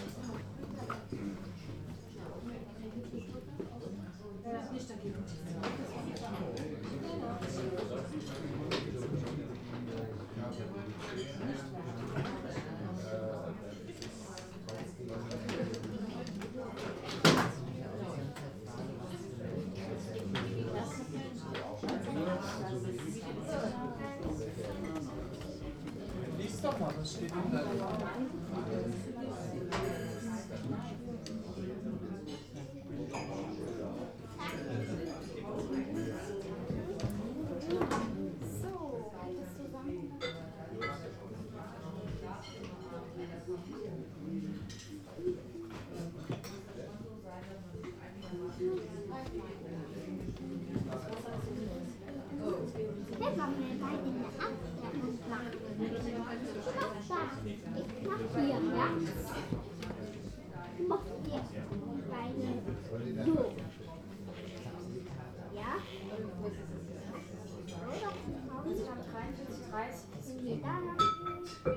erbach, rheinallee: weinstube - the city, the country & me: wine tavern
wine tavern "maximilianshof" of the wine-growing estate oetinger
the city, the country & me: october 17, 2010
Eltville am Rhein, Deutschland, October 2010